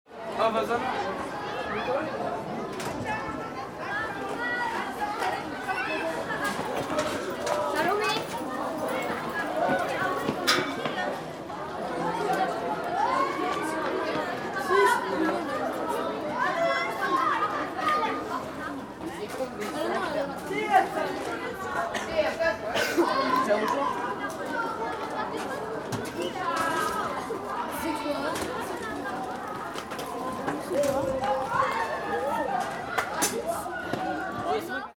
Cour, collège de Saint-Estève, Pyrénées-Orientales, France - Cour, à l'intercours
Dans la cour.
Passage d'élèves à l'intercours.
Preneur de son : Steven.